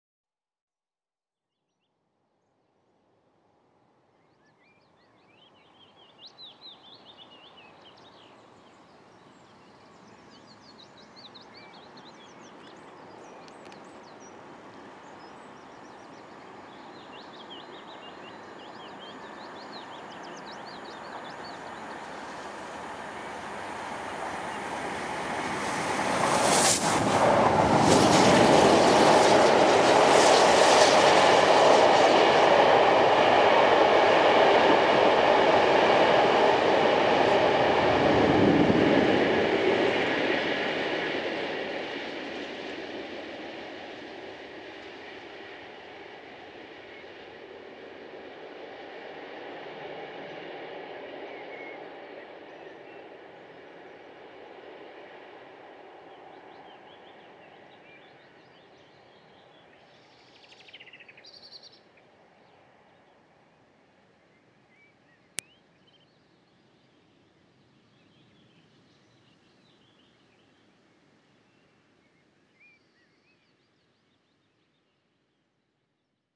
Railway Cottages, Crosby Garrett, Kirkby Stephen, UK - Steam Train Crosby Garrett
A fast steam train passing under a footbridge Travelling uphill towards Kirkby Stephen station on the Settle to Carlisle line mid afternoon on a sunny May day. Two mics -a telling pointing in the direction the train is arriving from and a Pearl MS-8 pointing in the direction it leaves. Sound Devices MixPre 10T